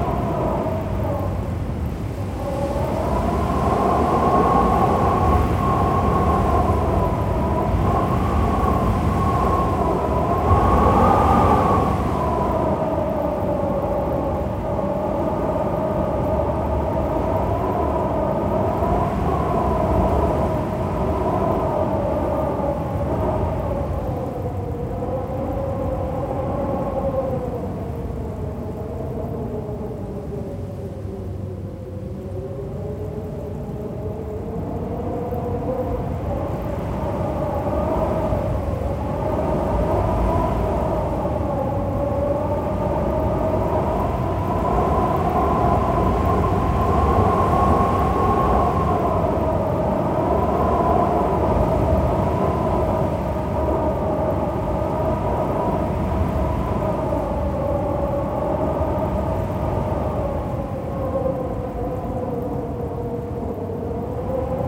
Tienen, Belgique - The wind in a nearly abandoned aircraft base
Recording of the wind in a nearly abandoned aircraft base. There's only a few landings during the Sunday. The other days everything is empty. In fact almost all the buildings are completely trashed. On the plains, there's a lot of wind today. The wind makes its way through a broken door. It's a cold sound, punctuated by slamming door and even a glass pane that breaks on the ground. Ouh ! Dangerous ! One hour recording is available on demand.